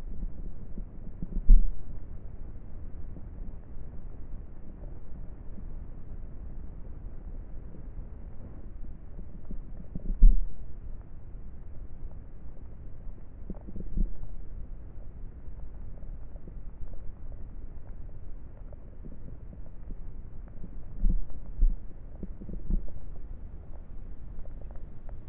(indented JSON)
{
  "title": "막걸리 만들기 발효 과정 (시작 48시 후에) fermentation of rice wine (after 48ho",
  "date": "2020-11-19 13:00:00",
  "description": "막걸리 만들기 발효 과정_(시작 48시 후에) fermentation of rice wine (after 48hours))",
  "latitude": "37.85",
  "longitude": "127.75",
  "altitude": "101",
  "timezone": "Asia/Seoul"
}